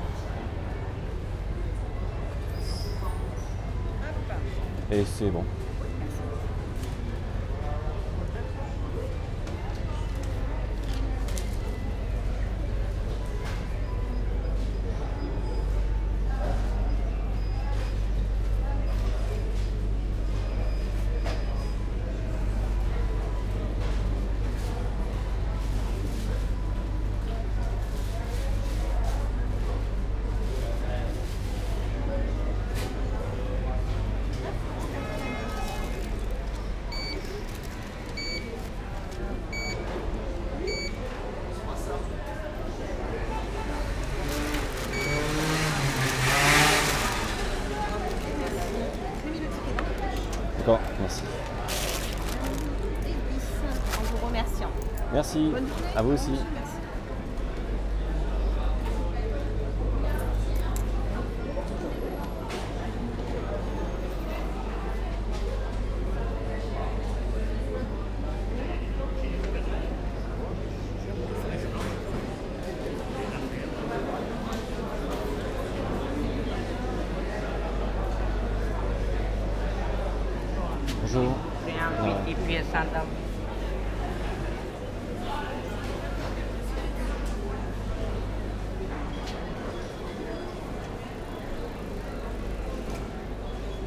Place des Capucins, Bordeaux, France - Market ambience
Fresh fruits and vegetables stands, cheese, nuts, etc.
Recorded wit two homemade tiny microphones (Primo EM258 omni electret capsule), clipped on the hood of my coat, plugged into a Zoom H5 in my inner pocket.
Nouvelle-Aquitaine, France métropolitaine, France, 7 February 2020